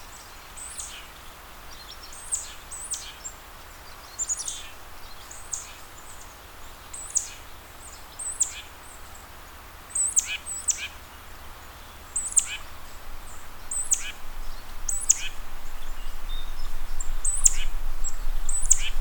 Warren Landing Rd, Garrison, NY, USA - Bird Sanctuary
Recorded on the Constitution Marsh Audubon Center and Sanctuary - a designated New York State Bird Conservation Area.
Zoom H6
Putnam County, New York, United States of America